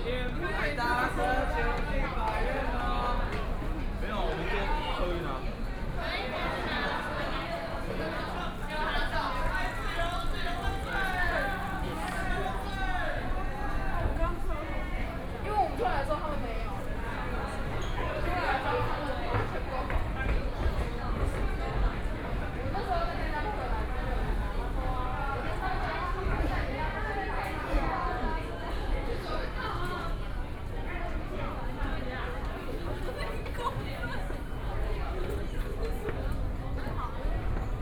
Taipei main Station, Taiwan - Walking in the station
Walking into the station
Binaural recordings